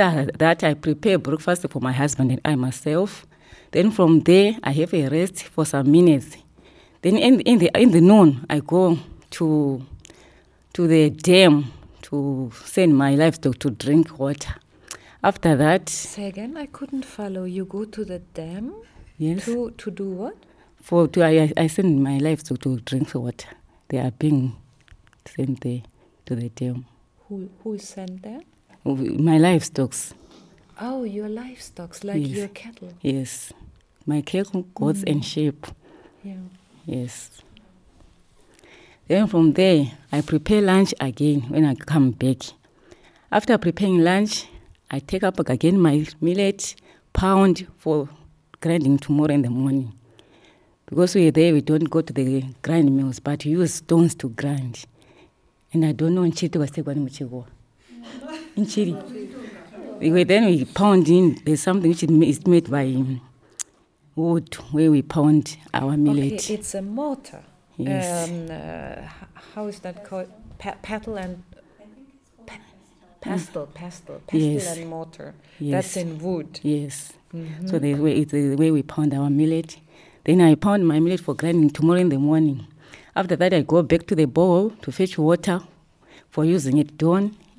….you are joining us during a workshop for audio documentation with the women of Zubo Trust in a round hut-shaped conference room at Tusimpe… quite at the beginning we explored the power of detailed description; how can we take our listeners with us to a place they might not know… here Lucia Munenge, Zubo’s community-based facilitator for Sikalenge gives it a try in her first recording...